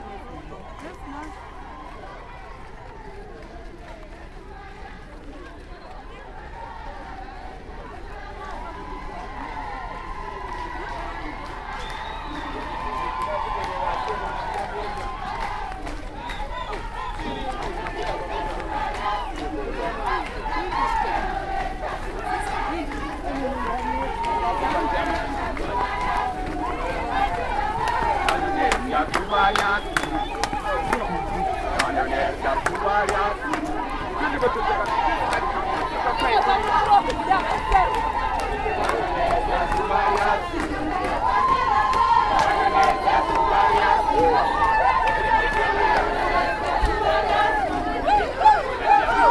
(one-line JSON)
{"title": "Urban Centre, Binga, Zimbabwe - Women's March to Freedom Square", "date": "2016-04-29 10:36:00", "description": "recordings from the first public celebration of International Women’s Day at Binga’s urban centre convened by the Ministry of Women Affairs Zimbabwe", "latitude": "-17.62", "longitude": "27.34", "altitude": "620", "timezone": "Africa/Harare"}